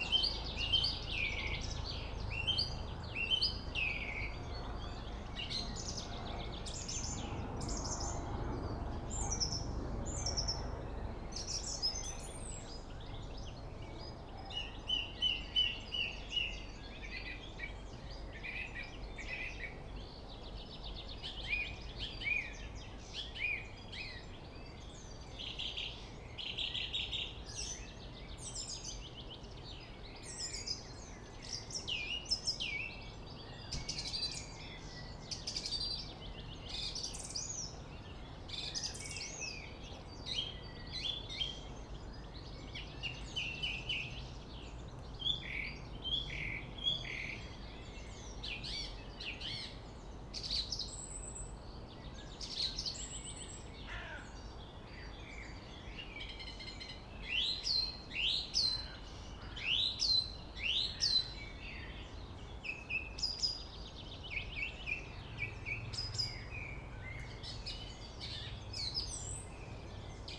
Friedhof Columbiadamm, Berlin - Song thrush / Singdrossel
Friedhof Columbiadamm (Neuer Garnisonsfriedhof), Song thrush (Singdrossel) singing, distant city / traffic noise
(Sony PCM D50)